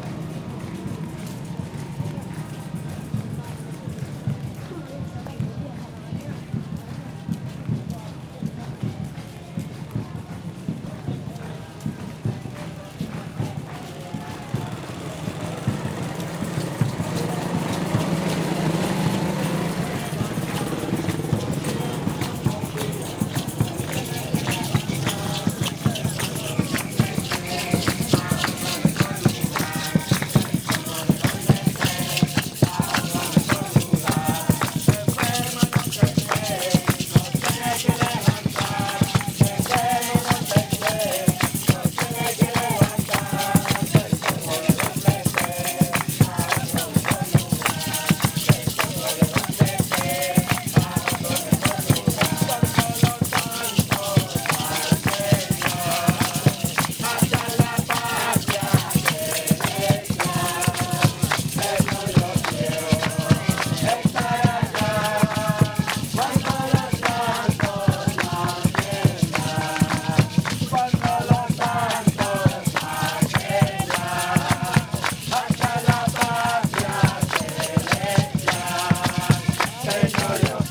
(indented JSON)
{
  "title": "Malecón Maldonado, Iquitos, Peru - thank you Jesus",
  "date": "2001-02-17 19:30:00",
  "description": "Youth with a mission singing for Jesus",
  "latitude": "-3.75",
  "longitude": "-73.24",
  "altitude": "102",
  "timezone": "America/Lima"
}